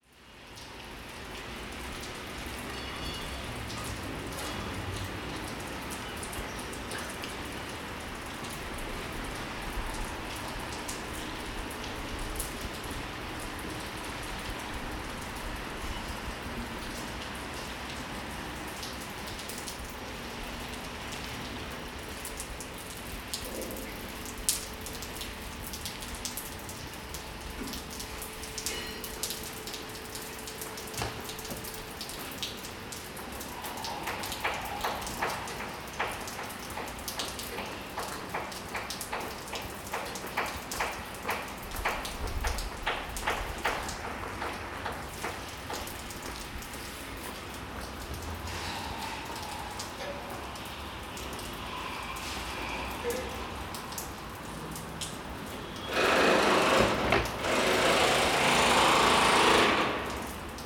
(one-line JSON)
{"title": "London Borough of Southwark, Greater London, UK - Construction Work at Blackfriars Bridge, Leaking Pipe", "date": "2013-02-01 13:32:00", "description": "Similar to previous recording but from a different position and with microphone focused on a leaking pipe.", "latitude": "51.51", "longitude": "-0.10", "altitude": "10", "timezone": "Europe/London"}